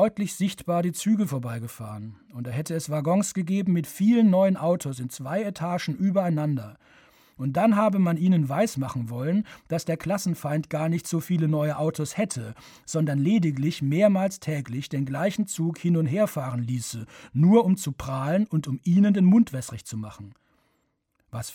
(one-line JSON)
{"title": "bei wahlhausen - im werratal", "date": "2009-08-08 22:49:00", "description": "Produktion: Deutschlandradio Kultur/Norddeutscher Rundfunk 2009", "latitude": "51.29", "longitude": "9.98", "altitude": "156", "timezone": "Europe/Berlin"}